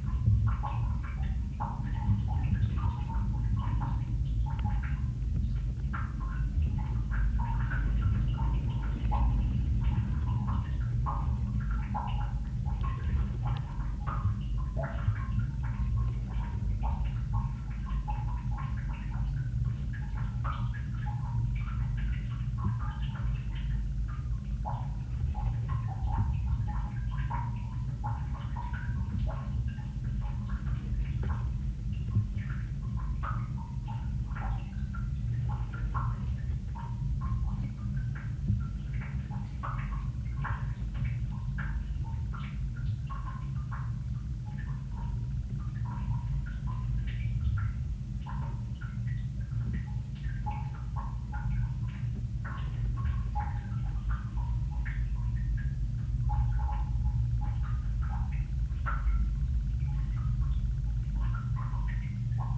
Old Concrete Rd, Penrith, UK - Drips in Chamber
dripping heard through cover of water installation. 2x hydrophones.